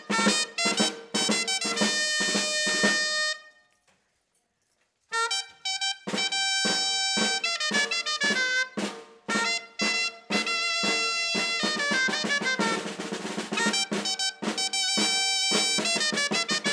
Carrer Sant Antoni, Tàrbena, Alicante, Espagne - Tàrbena - Province d'Alicante - Espagne XXII sème fête gastronomique et Artisanal de Tàrbena - Inauguration de la 2nd Journée
Tàrbena - Province d'Alicante - Espagne
XXII sème fête gastronomique et Artisanal de Tàrbena
Inauguration de la 2nd Journée
Les 2 jeunes musiciens parcourent les rues de la ville
Ambiance 1
ZOOM H6
Alacant / Alicante, Comunitat Valenciana, España